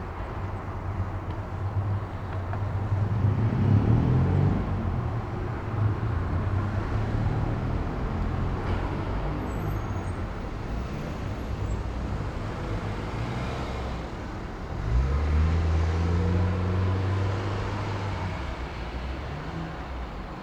Berlin: Vermessungspunkt Friedelstraße / Maybachufer - Klangvermessung Kreuzkölln ::: 27.11.2010 ::: 13:43
Berlin, Germany, 27 November 2010